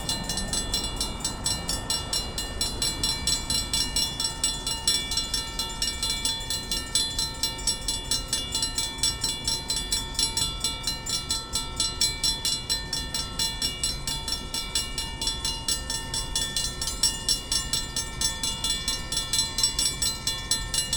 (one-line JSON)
{"title": "Canal St. Metra crossing, south side of tracks - Metra commuter train passes by", "date": "2009-08-21 17:40:00", "latitude": "41.89", "longitude": "-87.64", "altitude": "179", "timezone": "America/Chicago"}